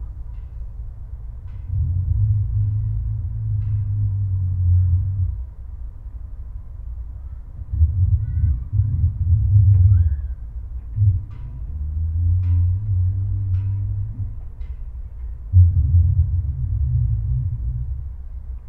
Kaunas, Lithuania, installed sound
sound installation "sleeping beast of Kaunas town". small omni mics in the hole in the wall